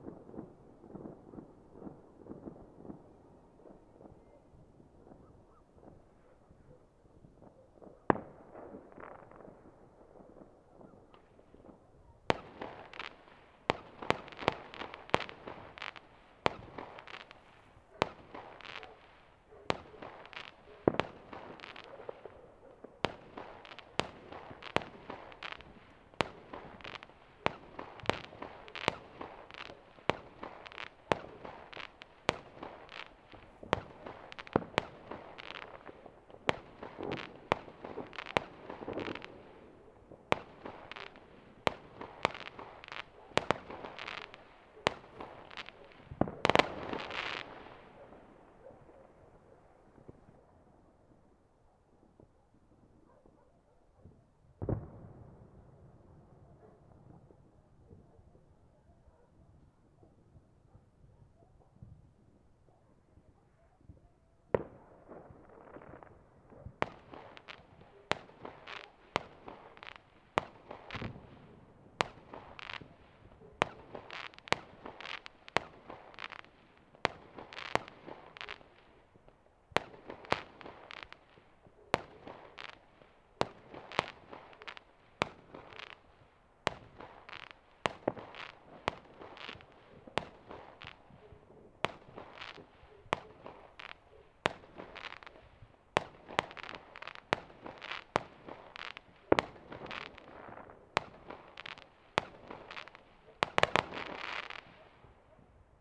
Casa do Castelo, Colmeosa - Countryside NYE fireworks from a distance
Recorded in the woods of a secluded house not far from Santa Comba Dão. Fireworks celebrating the beginning of 2020 are heard from a distance in an otherwise quiet place. This was recorded a few minutes after midnight with the internal XY mic of a Zoom H2n.